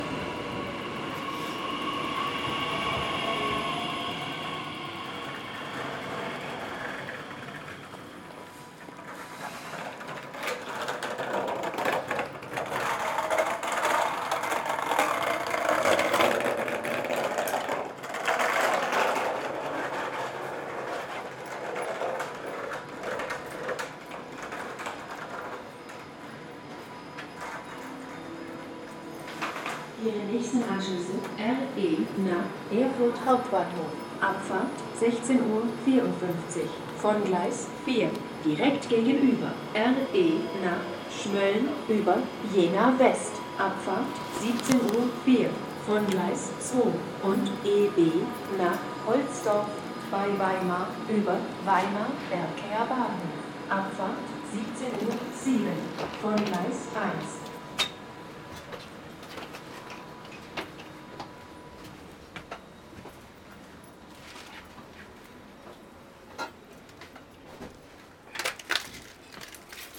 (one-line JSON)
{"title": "Weimar, Weimar, Germany - Weimar train station ambience with skater", "date": "2020-07-22 16:45:00", "description": "smooth sound of train approaching, emerging and evolving skate textures, voice and spatial transition.\nRecording gear: Zoom F4 Field Recorder, LOM MikroUsi Pro.", "latitude": "50.99", "longitude": "11.33", "altitude": "239", "timezone": "Europe/Berlin"}